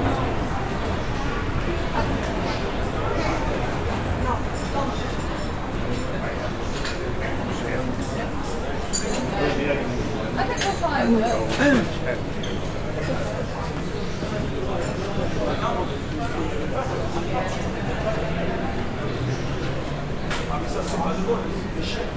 cosmopolitan atmosphere, street cafes and tasteful restaurants
Captation ZOOM H6
Gipuzkoa, Euskadi, España